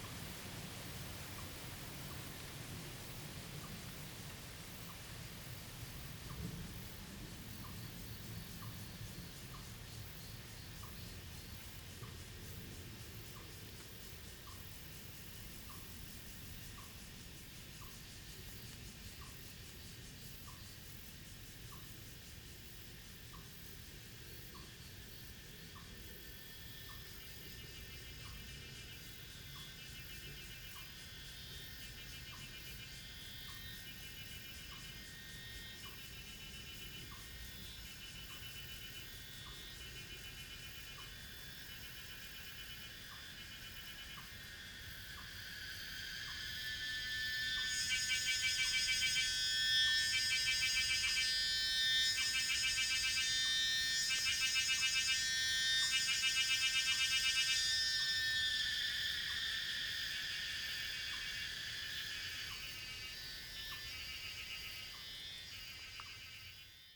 環湖路一段, Daxi Dist., Taoyuan City - Cicada and bird sound
Cicada and bird sound, Traffic sound
Zoom H2nMS+XY
Taoyuan City, Taiwan, 9 August 2017, 18:05